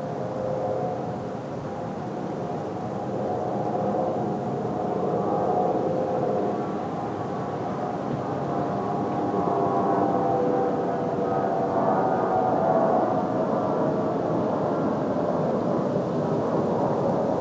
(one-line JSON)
{"title": "Montreal: Mont Royal Lookout - Mont Royal Lookout", "date": "2008-11-02 06:30:00", "description": "equipment used: Zoom H4, 2 x Octava MK12", "latitude": "45.51", "longitude": "-73.59", "altitude": "170", "timezone": "America/Montreal"}